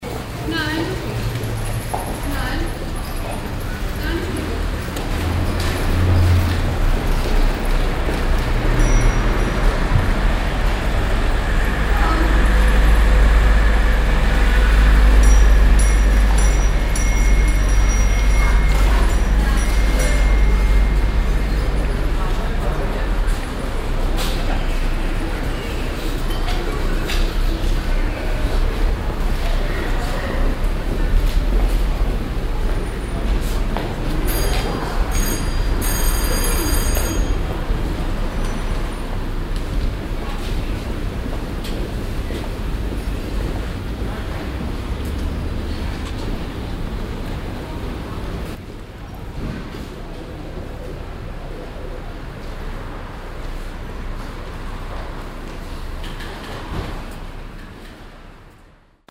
haan, marktpassage
steps and toy machine for kids
project: : resonanzen - neanderland - social ambiences/ listen to the people - in & outdoor nearfield recordings
21 April 2008